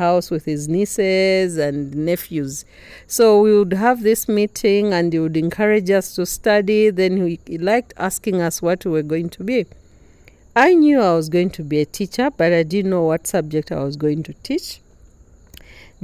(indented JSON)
{
  "title": "Wayi Wayi Gallery & Studio, Livingstone, Zambia - Agness Buya Yombwe outside Wayi Wayi in the evening....",
  "date": "2012-11-13 20:05:00",
  "description": "The conversation took place outside Agness’ home, the Y-shaped house of Wayi Wayi Studio & Gallery (in fact, inside, after the first track since it started raining). Agness was busy preparing for the Arts and Crafts Fair in Lusaka. A thousand things were to be done; but she still made time in the evening to take me – and future listeners - on a journey of the Mbusa, the artifacts, the rituals, the ceremony, the women’s teachings for life.\nA Visual Artist, designer and art teacher from Lusaka, Agness founded and runs Wayi Wayi Art Studio & Gallery with her husband, the painter Laurence Yombwe, in Livingstone.",
  "latitude": "-17.84",
  "longitude": "25.86",
  "altitude": "955",
  "timezone": "Africa/Lusaka"
}